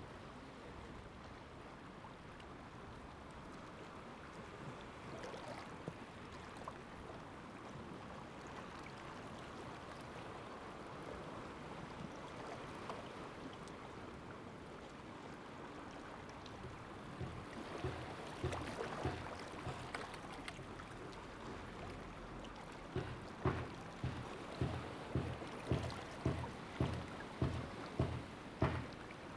{"title": "椰油村, Koto island - Sound tide", "date": "2014-10-28 15:31:00", "description": "Small port, Sound tide\nZoom H2n MS +XY", "latitude": "22.05", "longitude": "121.51", "altitude": "12", "timezone": "Asia/Taipei"}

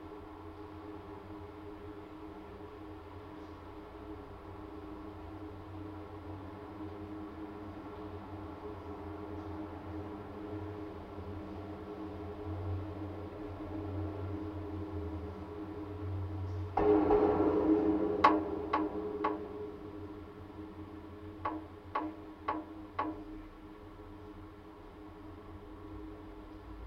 {"title": "Vilnius, Lithuania, metallic constructions of the bridge", "date": "2019-09-28 14:30:00", "description": "contact microphones on metallic constructions of bridge.", "latitude": "54.69", "longitude": "25.29", "altitude": "91", "timezone": "Europe/Vilnius"}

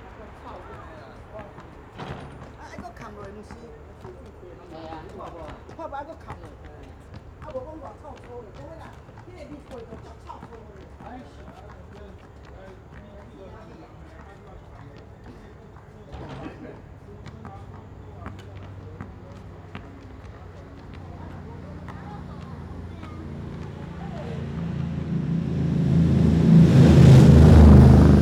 {"title": "Sec., Huanhe W. Rd., Banqiao Dist., New Taipei City - Traffic Sound", "date": "2012-01-19 16:21:00", "description": "Traffic Sound, Next to the tracks\nZoom H4n +Rode NT4", "latitude": "25.00", "longitude": "121.44", "altitude": "3", "timezone": "Asia/Taipei"}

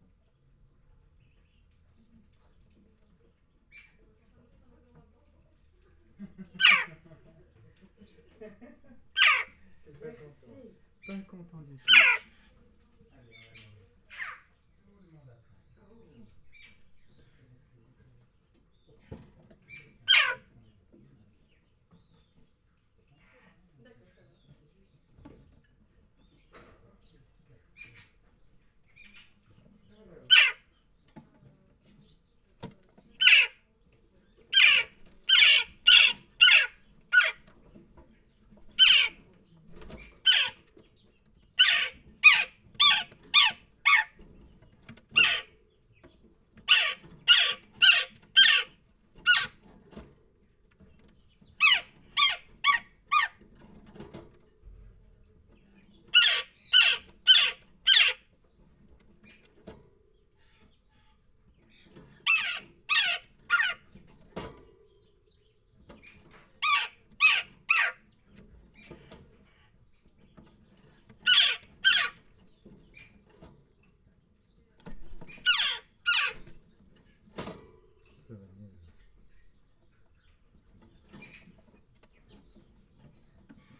{"title": "Ottignies-Louvain-la-Neuve, Belgique - Birdsbay, hospital for animals", "date": "2016-06-27 20:00:00", "description": "Birdsbay is a center where is given revalidation to wildlife. It's an hospital for animals.\n0:00 to 3:30 - Nothing's happening. Increasingly, a jackdaw asks for food.\n3:30 to 4:55 - Giving food to the four jackdaws.\n4:55 to 6:43 - Giving food to the three magpies.", "latitude": "50.66", "longitude": "4.58", "altitude": "78", "timezone": "Europe/Berlin"}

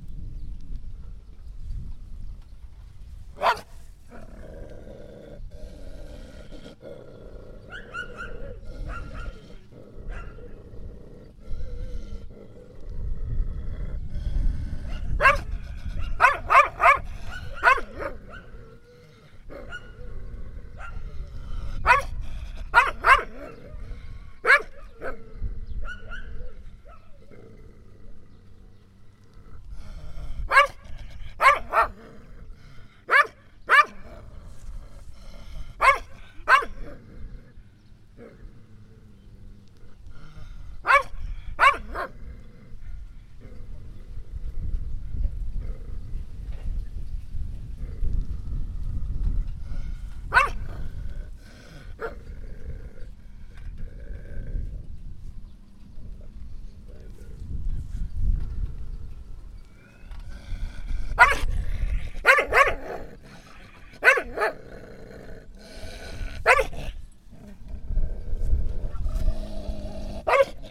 {"title": "Laâssilat, Maroc - Chiens qui aboient", "date": "2021-03-07 14:45:00", "description": "Des chiens du hameau aboient et grognent devant une maison. Ils étaient attachés.\nSon pris par Kais, Ayman et Mohamed.\nLklab dyl hameau kinabhou kodam wahd dar. Kanou mrboutin.", "latitude": "33.35", "longitude": "-7.73", "altitude": "188", "timezone": "Africa/Casablanca"}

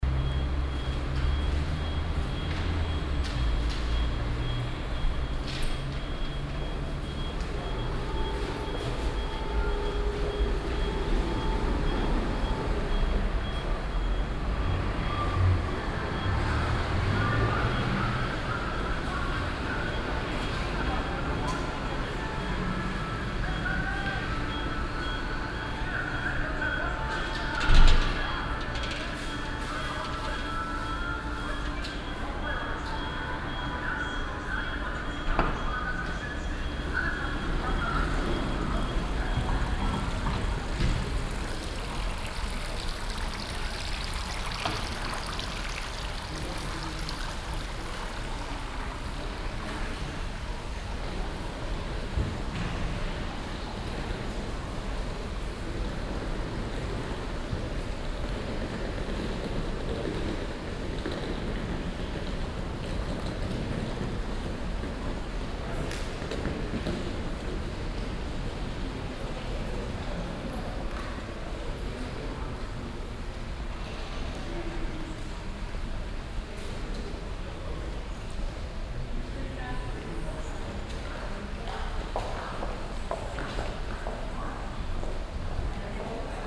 {"title": "cologne, neumarkt, sparkasse hauptfiliale", "date": "2008-09-04 12:22:00", "description": "morgens - gang durch foyer mit geldautomaten und auszugdruckern durch hauphalle mit beratungsschaltern und kleinem brunnen\nsoundmap nrw - social ambiences - sound in public spaces - in & outdoor nearfield recordings", "latitude": "50.94", "longitude": "6.95", "altitude": "62", "timezone": "Europe/Berlin"}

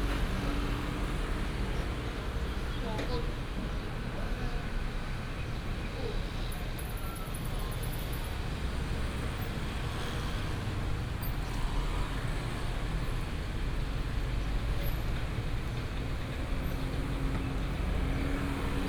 {"title": "Zhongshan E. Rd., Sihu Township - at the intersection", "date": "2018-05-08 09:59:00", "description": "The main lively area of the village, traffic Sound, Bird sound", "latitude": "23.64", "longitude": "120.23", "altitude": "9", "timezone": "Asia/Taipei"}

